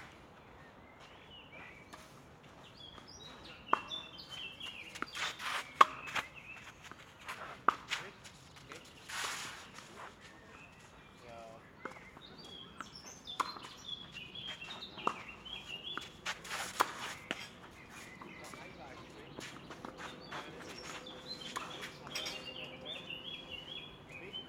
Zürich, Mythenquai, Schweiz - Tennisplatz, Sand
Spiel, Linienflugzeug, Strassenbahn, Zug.